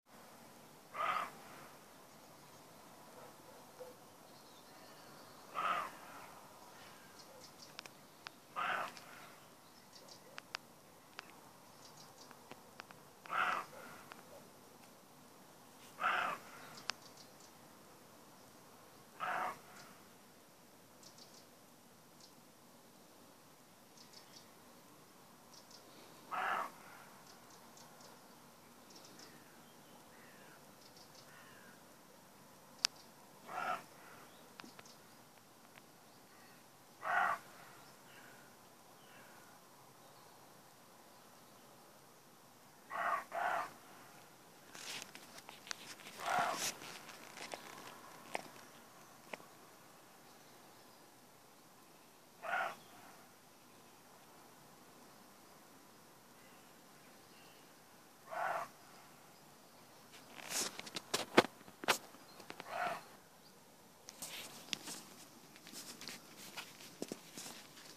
Donzenac, Corrèze, France - brame de chevreuil
Un jeune chevreuil, par son brame matinal, cherche à attirer dans le pré 4 chèvres qui hésitent à quitter le couvert.